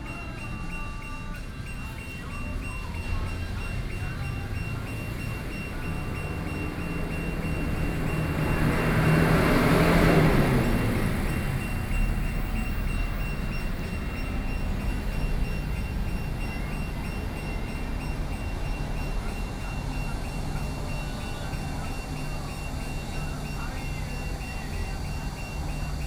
Daye Rd., Beitou Dist., Taipei City - Garage warning sound
Garage warning sound, Traffic Noise, Sony PCM D50 + Soundman OKM II
台北市 (Taipei City), 中華民國, July 2013